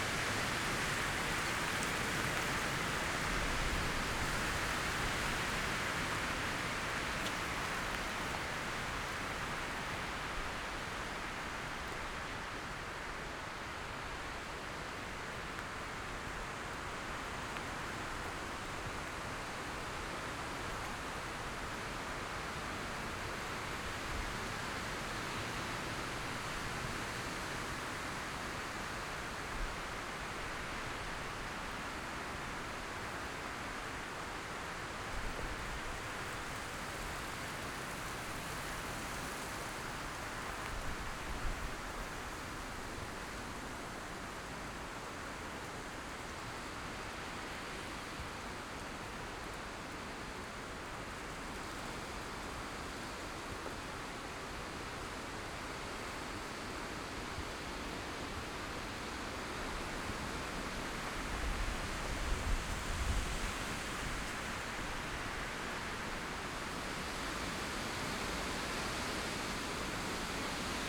Kalenica, Góry Sowie - forest ambience, wind in high trees
Góry Sowie, Owl mountains, Eulengebirge, forest ambience, sound of the wind
(Sony PCM D50, DPA4060)
powiat dzierżoniowski, dolnośląskie, RP